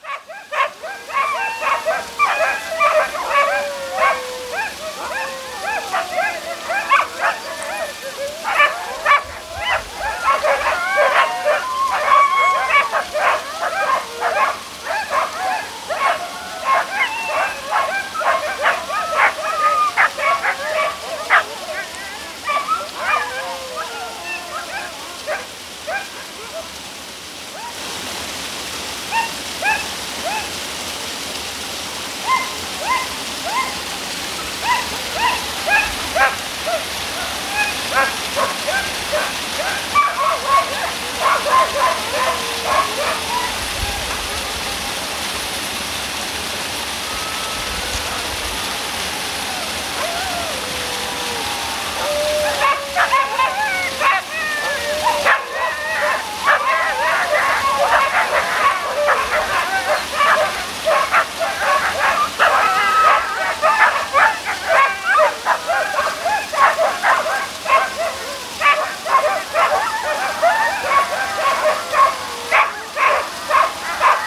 Sled dogs along the Dranse River 1945 Liddes, Suisse - Sled dogs ready to go
Recording near a little river over the bridge. Approx 10 dogs ready to tow a sleg. Its cold and the snow is on the pine and the flor. We can hear the small river.
Recording with zoom H1n and proced.